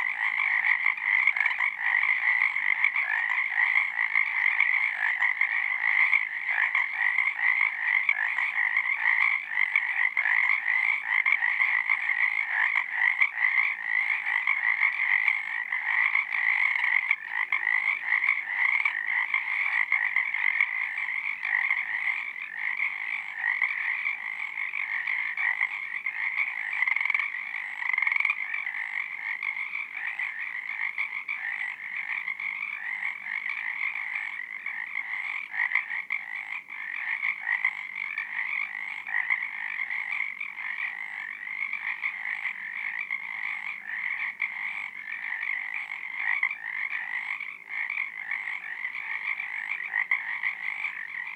Metabolic Studio Sonic Division Archives:
Recording of Frogs taken at midnight on Owen's River Bank. Recorded on Zoom H4N